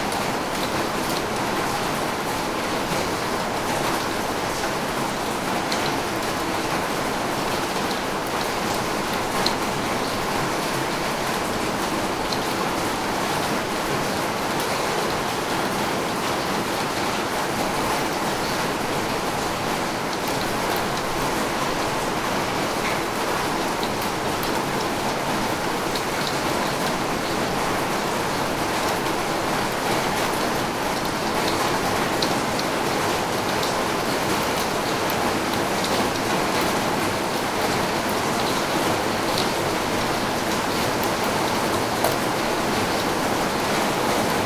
Ln., Sec., Zhongyang N. Rd., Beitou Dist - Rainy Day
Beitou District, Taipei City, Taiwan, 2 December 2012